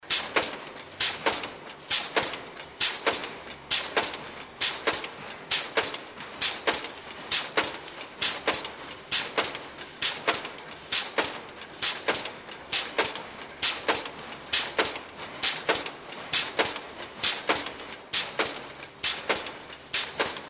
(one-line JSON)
{"title": "monheim, frohnstr, kettenherstellung - monheim, frohnstr, pneumatik", "description": "werkhalle - kettenherstellung - pneumatik\naufnahme mit direktmikrophonie stereo\nsoundmap nrw - social ambiences - sound in public spaces - in & outdoor nearfield recordings", "latitude": "51.09", "longitude": "6.89", "altitude": "45", "timezone": "GMT+1"}